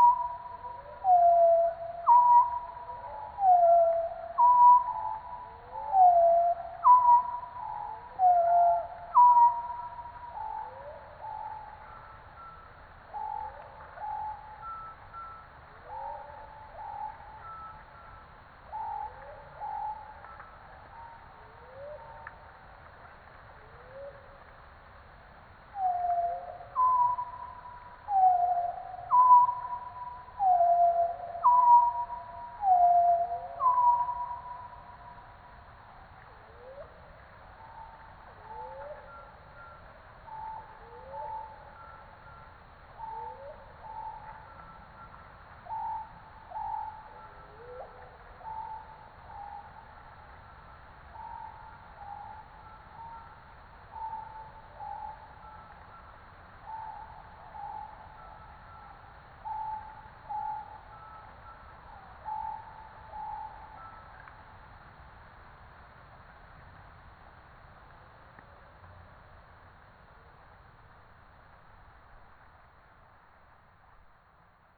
Zerkow - Czeszewo Landscape Park; Warta River Oxbow Lake; Zoom H6 & Rode NTG5
powiat wrzesiński, województwo wielkopolskie, Polska